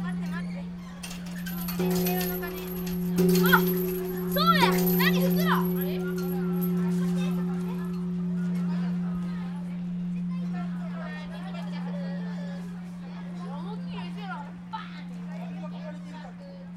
Pupils ringing the peace bell in Hiroshima Peace Park.
Recorded with Olympus DM-550
中国地方, 日本, November 2019